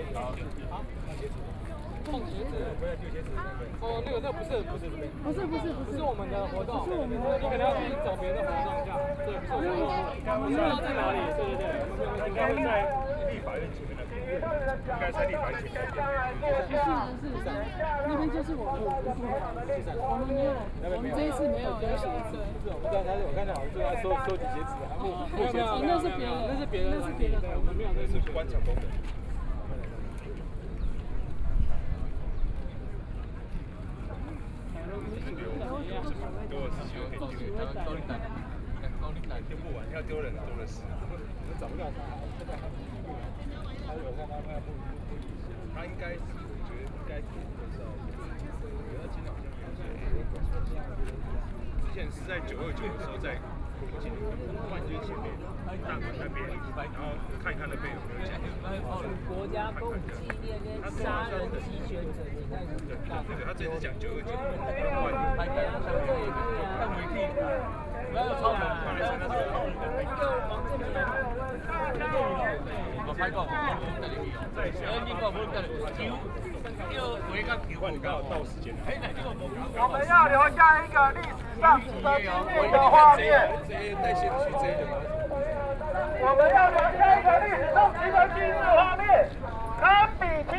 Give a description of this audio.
A group of young people through a network awareness, Nearly six million people participated in the call for protest march, Taiwan's well-known writers and directors involved in protests and speeches, Binaural recordings, Sony PCM D50 + Soundman OKM II